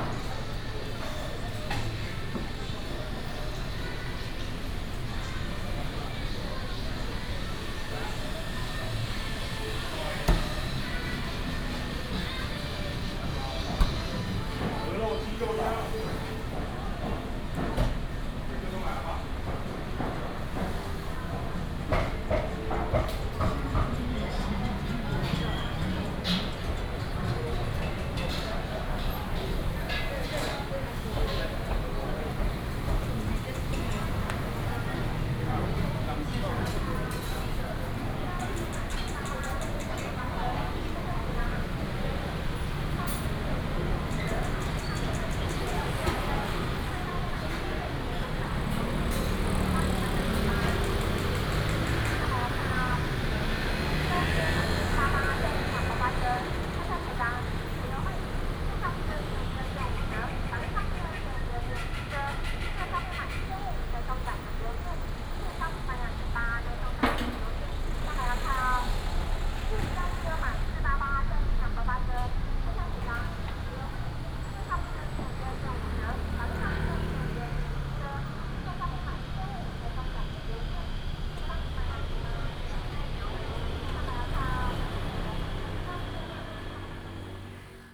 中平黃昏市場, Taoyuan Dist. - dusk market
Walking in the traditional dusk market, Traffic sound
Taoyuan District, Taoyuan City, Taiwan, 15 July 2017